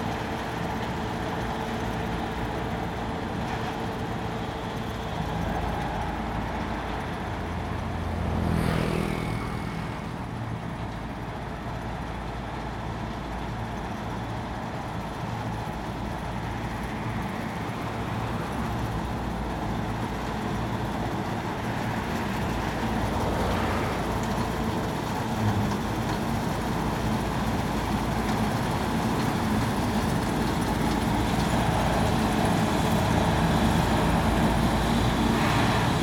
Huandao N. Rd., Jincheng Township - Sorghum harvest machinery vehicles
Next to farmland, Dogs barking, Sorghum harvest machinery vehicles, Traffic Sound
Zoom H2n MS+XY